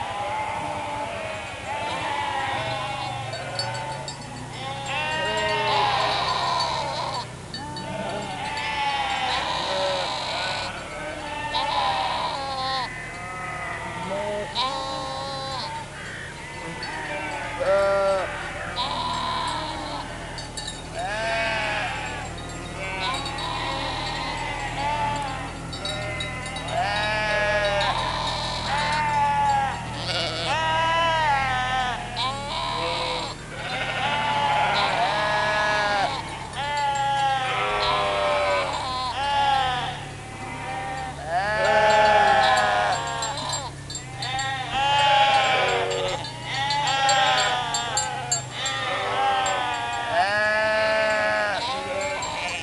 Unnamed Road, Isle of Bute, UK - Sheeps Lament at the Edge of St. Blanes Chapel
Recorded with a pair of DPA4060s and a Tascam DR-100 MKIII